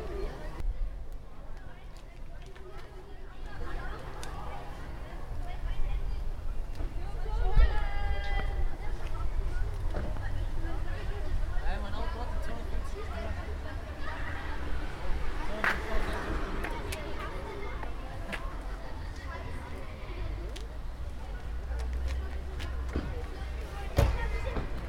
Grevenbroich Am Sodbach, Grevenbroich, Deutschland - Parking lodge of different school
We are at a parking lodge between two different schools. We use a "ZoomH1" microphone.